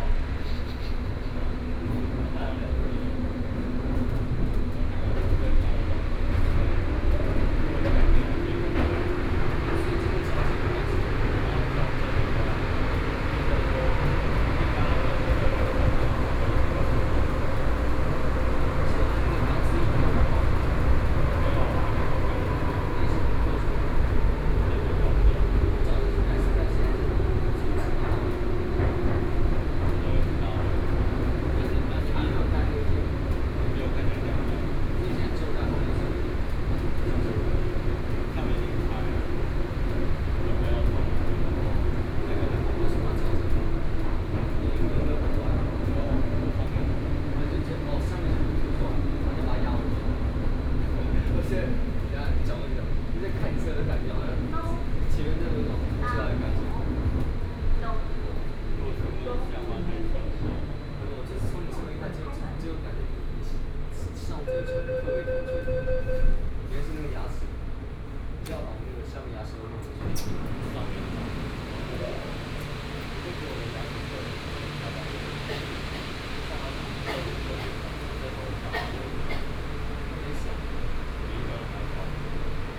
114台灣台北市內湖區 - Neihu Line (Taipei Metro)
from Huzhou Station to Taipei Nangang Exhibition Center Station, Binaural recordings, Sony PCM D50 + Soundman OKM II